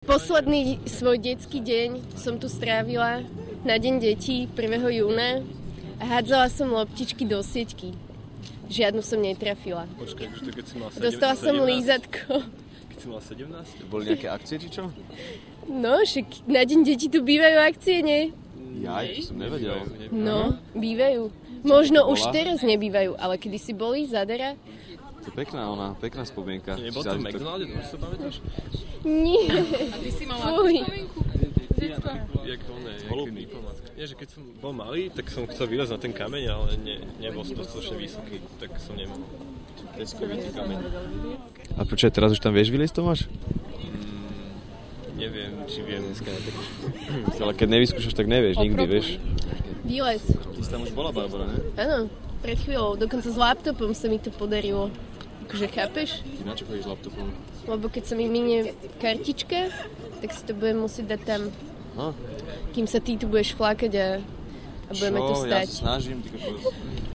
abstract:
here i spent the last day of my childhood, on the day of children, the 1st of june... and i was trying little balls into a net, but couldn't score at all and got a lollipop :: when you were 17 or what? :: there was really something going on that day? :: of course, on the day of children there was always something happening here :: i really didn't know about that :: maybe today not anymore, but back then... :: and you are sure this wasn't in mc donalds? :: no, come on! :: when i was a child i wanted to climb up that stone but i was too small :: and what about today? :: i'm not sure :: if you don't try... come on! :: barbara, you have already been up there? :: yes, recently i even went there with my laptop :: why with your laptop? :: because i was doing some work for university when you were just bumming around :: oh no, i'm always aspiring...